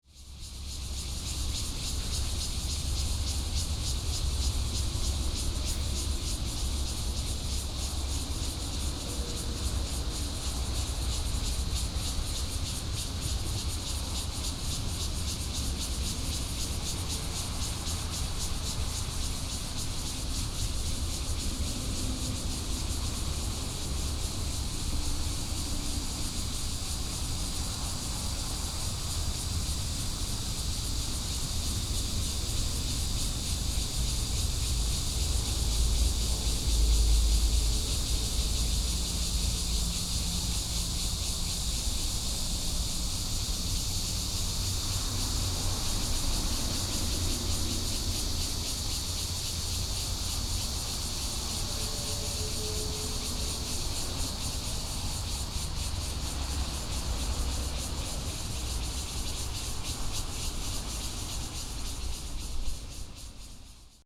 In the woods next to the freeway, Cicada cry, traffic sound, Binaural recordings, Sony PCM D100+ Soundman OKM II